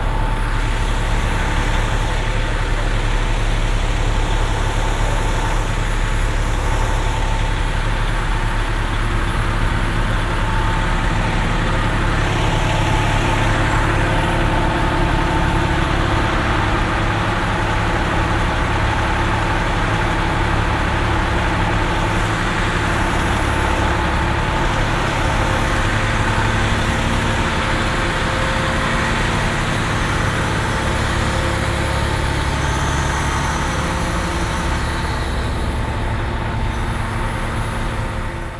vancouver, beatty street, fire brigade
three fire waggons block the street, motors running
soundmap international
social ambiences/ listen to the people - in & outdoor nearfield recordings